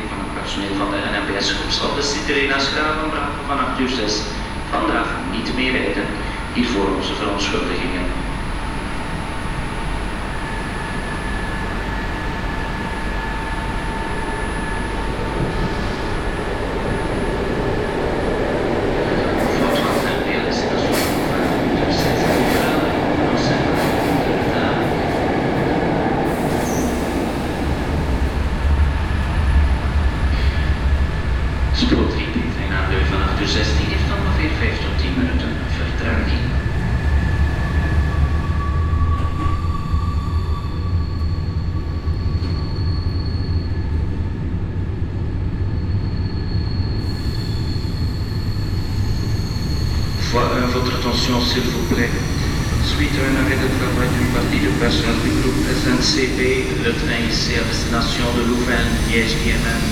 Brussels, Gare Centrale Greve Strike
Brussels, Gare Centrale Greve / Strike.
Brussels, Belgium